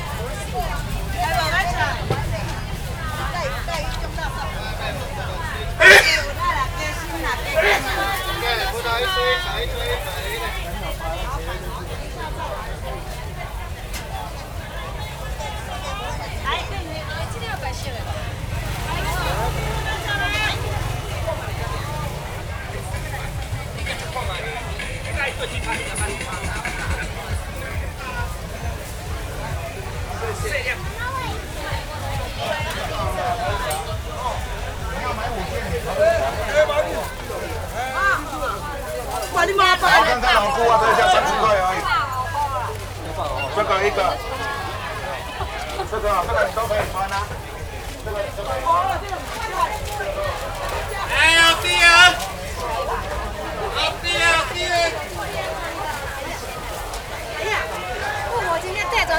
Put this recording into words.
walking in the Traditional markets, Rode NT4+Zoom H4n